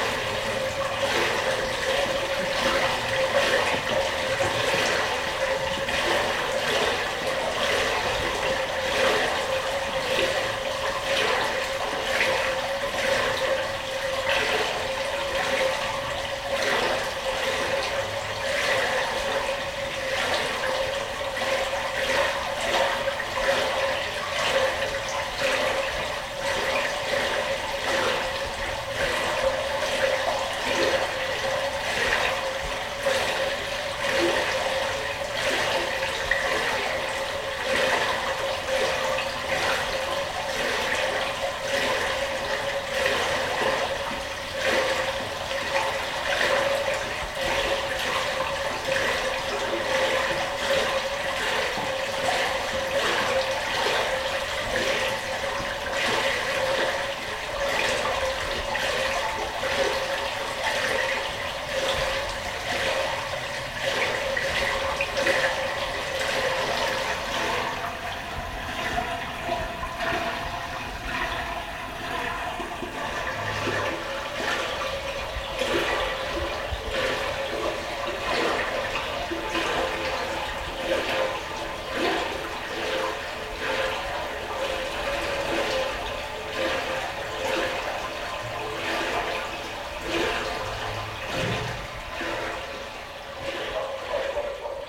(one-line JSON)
{"title": "velbert, langenberg, hauptstrasse, abwasserkanal", "date": "2008-07-01 13:45:00", "description": "wasserabfluss unter kanaldeckel, direktmikophonierung mono\nproject: :resonanzen - neanderland soundmap nrw: social ambiences/ listen to the people - in & outdoor nearfield recordings", "latitude": "51.35", "longitude": "7.12", "altitude": "128", "timezone": "Europe/Berlin"}